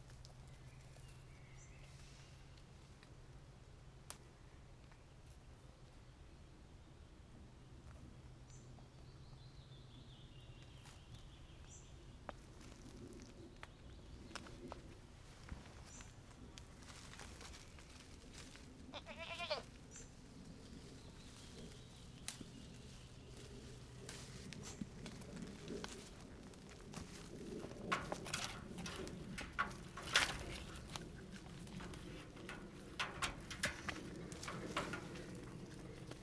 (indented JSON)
{
  "title": "Sasino, at gamekeeper's gate - little goat",
  "date": "2013-06-28 18:29:00",
  "description": "three little goats ran up the the gate and started chewing on oak tree leaves.",
  "latitude": "54.76",
  "longitude": "17.74",
  "altitude": "31",
  "timezone": "Europe/Warsaw"
}